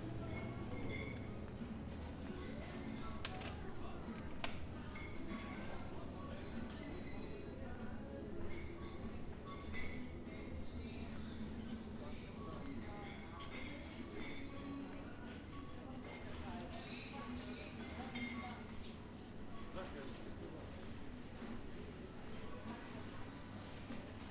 Grocery store.
Recorded with Zoom H4n
Nova Gorica, Slovenia, 6 June 2017